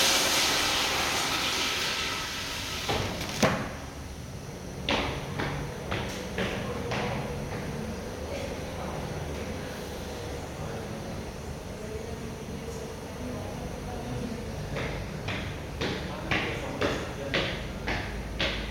Cra., Medellín, Antioquia, Colombia - Ambiente Baño UdeM
Nombre: Ambiente baño UdeM
Hora: 11:00 am
Coordenadas: 6°13'55.5"N 75°36'45.0"W
Dirección: Cra. 89 ##30d-16, Medellín, Antioquia UdeM Bloque 10
Descripción: Sonido de un baño de la universidad de medellin de la facultad de comunicaciones
Sonido tónico: Se escucha constantemente sonidos de agua pueden venir de la canilla o de los inodoros, puertas y personas caminando
Sonido sonoro: Se escucha aveces como cierres de bolsos, secandose las manos.
Tiempo: 3:00 min
Grabado por Stiven Lopez Villa, Juan José González, Isabel Mendoza, Manuela Gallego
27 September 2021, 11:00